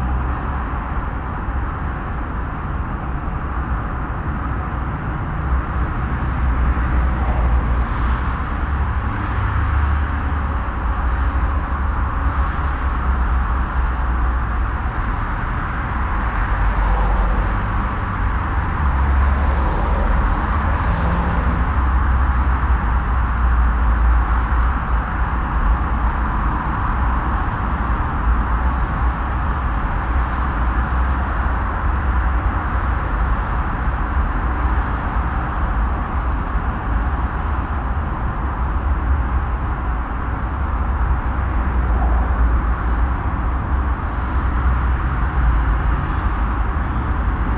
At the highway A40 that runs though the city and here into a tunnel. The sound of traffic passing by on a mild windy and sunny spring evening.
An der Autobagn A 40 die durch die Stadt und hier in einen Tunnel führt. Der Klang des vorbeirauschenden Verkehrs an einem leicht windigem, sonnigem Frühlingsabend.
Projekt - Stadtklang//: Hörorte - topographic field recordings and social ambiences
Germany